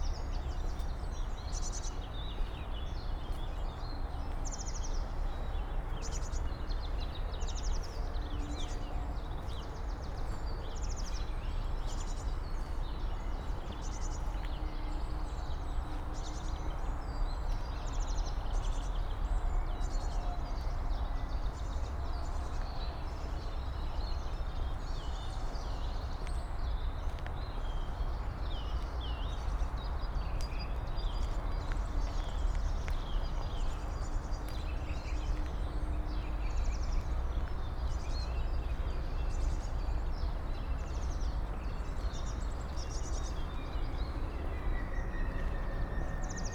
Berlin-Buch, derelict skater park - morning ambience, walking
Berlin Buch, walking over an derelict skater park.
(Sony PCM D50, DPA4060)